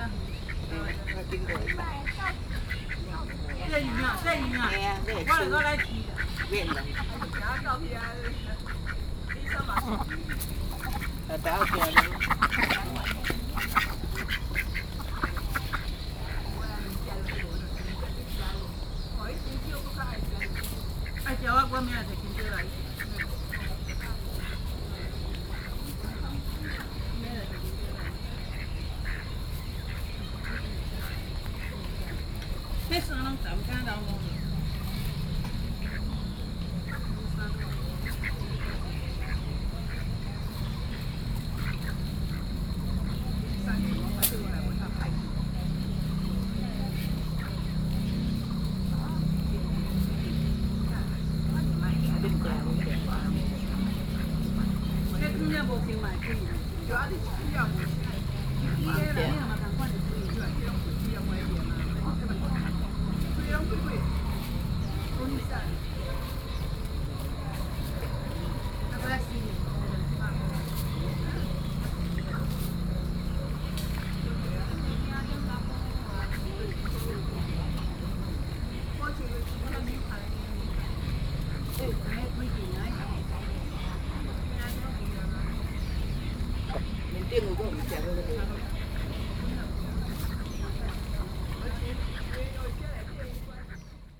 Hsichih, New Taipei City - An old man with a duck
Early in the morning a group of elderly people in the lake, Being fed the ducks on the lake, Binaural recordings